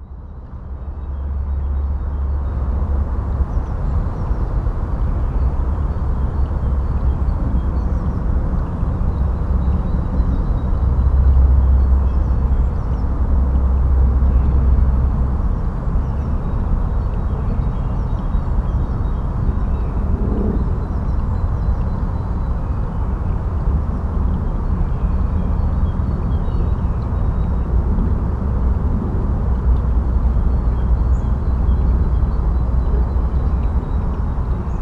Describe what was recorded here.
das rauschen der naheliegenden autobahn, feines plätschern kleiner gewässer im moor, am frühen abend, soundmap nrw/ sound in public spaces - social ambiences - in & outdoor nearfield recordings